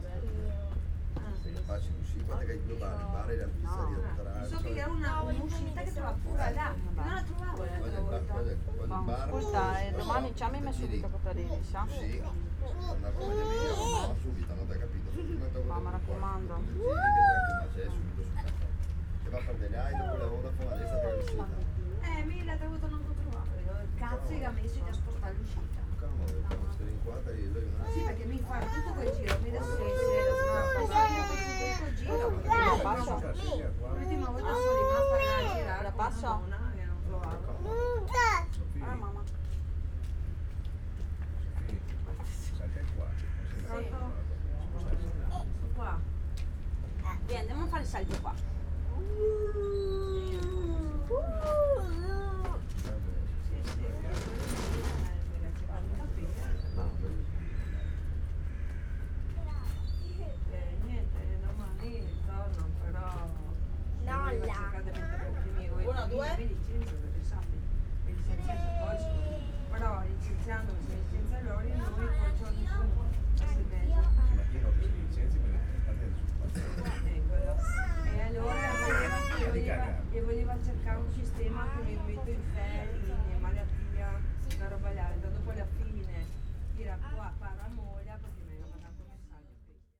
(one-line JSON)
{"title": "Zona Industriale, Porto Nuovo, Trieste, Italy - outside cafe ambience", "date": "2013-09-09 16:50:00", "description": "coffee break in a cafe at Zona Industriale, Porto Nuovo, an area with many small businesses, stores and warehouses.\n(SD702, DPA4060)", "latitude": "45.63", "longitude": "13.78", "altitude": "1", "timezone": "Europe/Rome"}